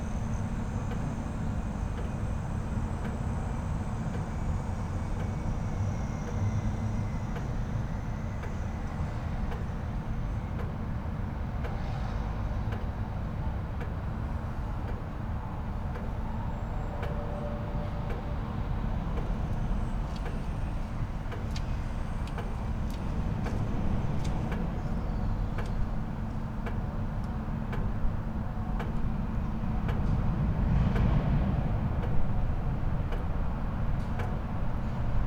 Risiera di San Sabba, Trieste, Italy - drops